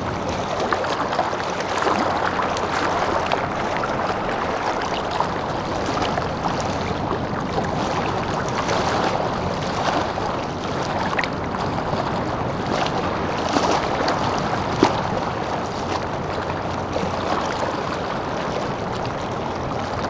Ptasi Raj, Gdańsk, Poland - Grobla
Grobla rec. by Rafał Kołacki